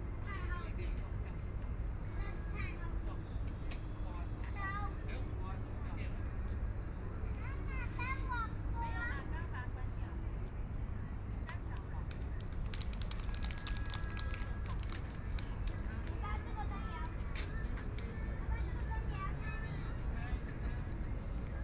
Zhuwei, New Taipei city - Night in the park
The crowd, Riverside Park Plaza, Start fishing noise, Binaural recordings, Sony PCM D50 + Soundman OKM II